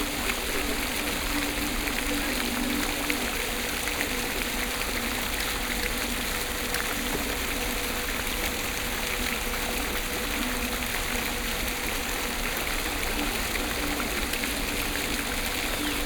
Paris, Square Alban Satragne, the fountain